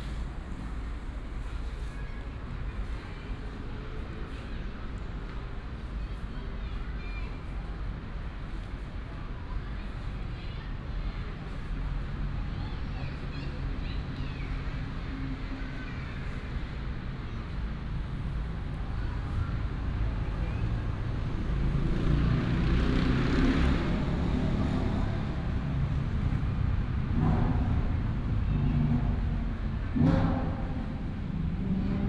walking in the Park, Traffic sound
Taichung City, Taiwan